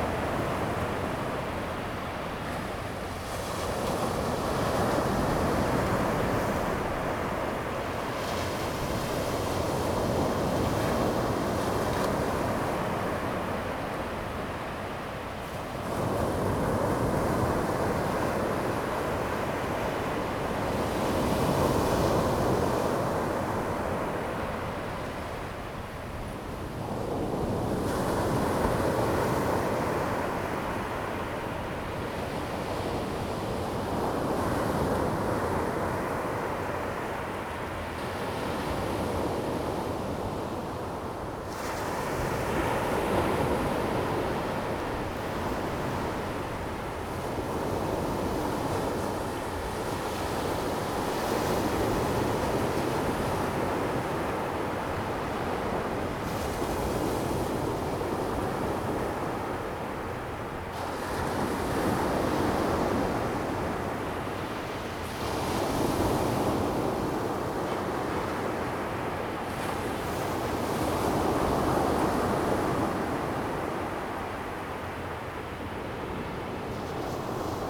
Qianzhouzi, 淡水區, New Taipei City - At the beach
On the beach, Sound of the waves
Zoom H2n MS+XY